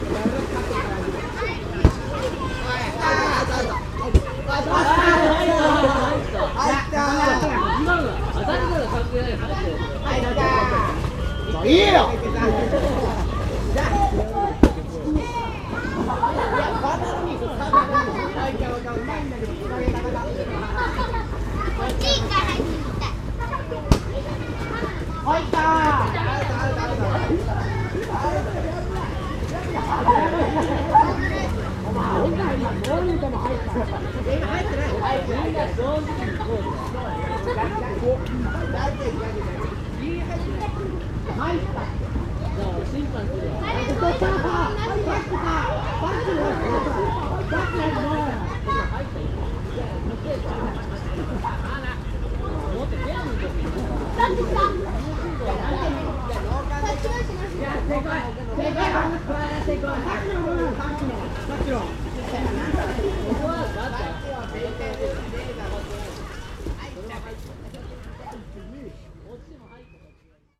takasaki, public pool, ball play
a group of teenage boys playing water ball in the non swimmer pool. kids laughing, shouts, water movements and the smashed ball.
international city scapes - social ambiences